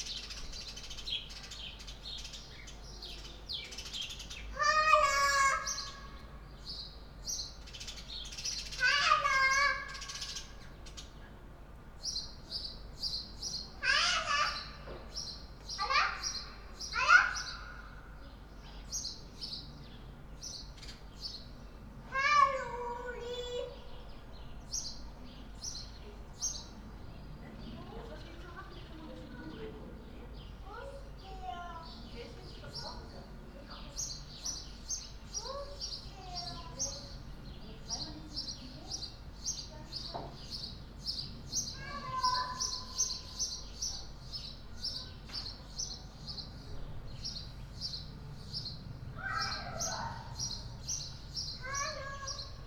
sunny Sunday late morning, conversation of two kids, across the backyard, from one house to the other.
(Sony PCM D50)